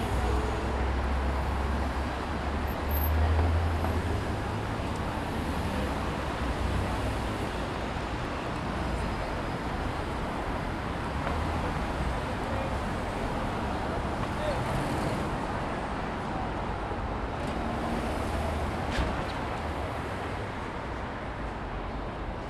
Chelsea, New York, NY, USA - Chelsea Market Crossroad
Saturday afternoon under the high line
at a cross road with tourists surrounding